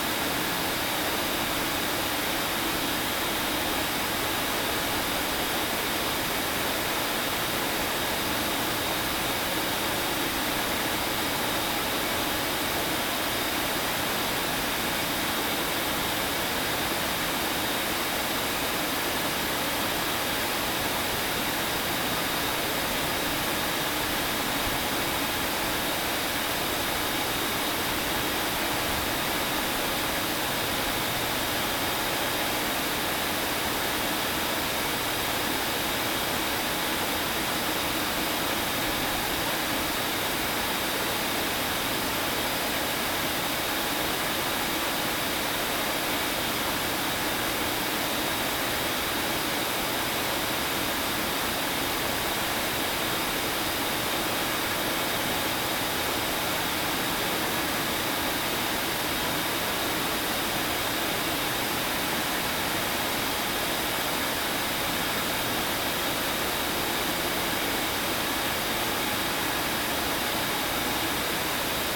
The University of Texas at Austin, Austin, TX, USA - Chilling Station No. 04
Recorded with a Marantz PMD661 and a pair of DPA 4060s.